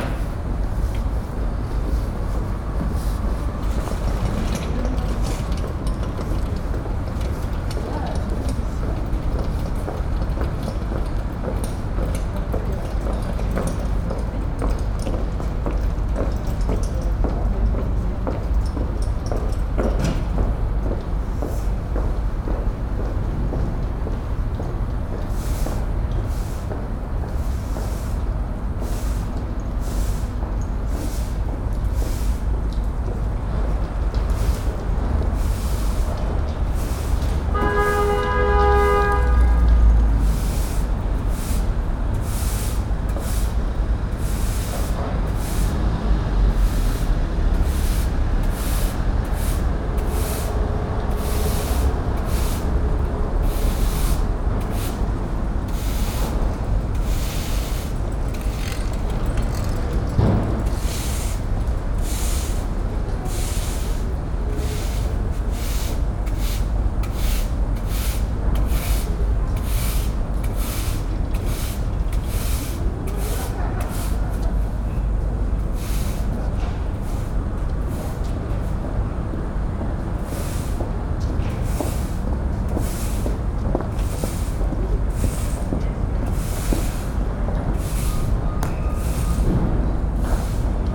Brussels, Rue Dejoncker, street sweeper.
Early morning, rather silent at this time, a street sweeper, some bicycles and women with heels.
PCM-M10 internal microphones.